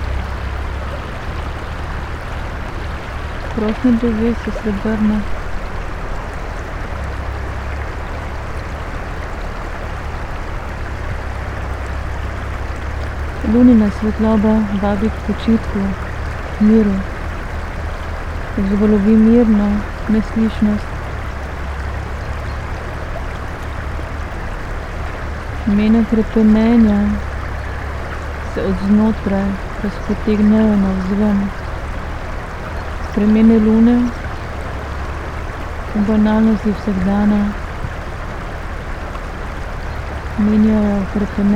Drava river, human voice, excavator on opposite riverbank